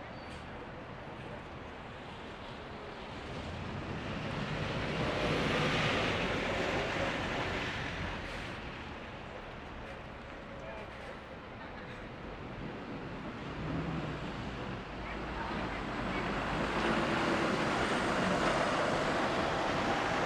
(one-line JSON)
{"title": "Donegall Square N, Belfast, UK - Belfast City Hall", "date": "2020-10-15 18:10:00", "description": "Recording of locals and visitors passing at the junction that intertwines city centre, daily shopping, and commuters. This is a day before Lockdown 2 in Belfast.", "latitude": "54.60", "longitude": "-5.93", "altitude": "14", "timezone": "Europe/London"}